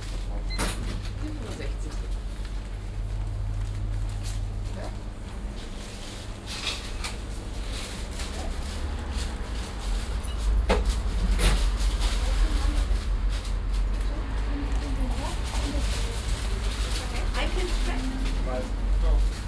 morgens in der bäckerei, tütenrascheln, bestellungen, wechselgeld
soundmap nrw - social ambiences - sound in public spaces - in & outdoor nearfield recordings
in der auen, bäckerei